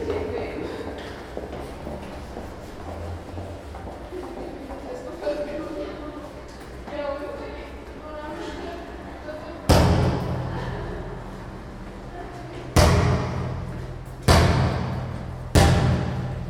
Ústí nad Labem-město, Česká republika - Bouncing ball in the corridor
Bouncing basketball in the pedestian underground corridor, which serves as a sound gallery Podchod po skutečností.
Ústí nad Labem-Ústí nad Labem-město, Czech Republic, 16 February 2013